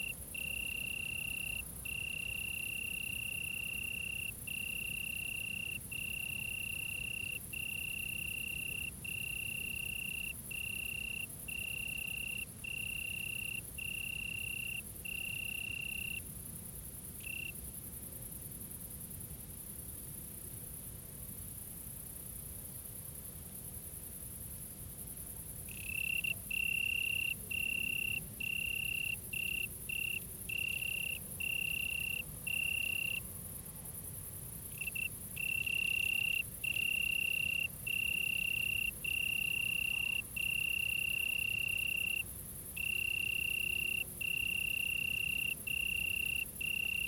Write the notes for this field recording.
Documenting acoustic phenomena of summer nights in Germany in the year 2022. *Binaural. Headphones recommended for spatial immersion.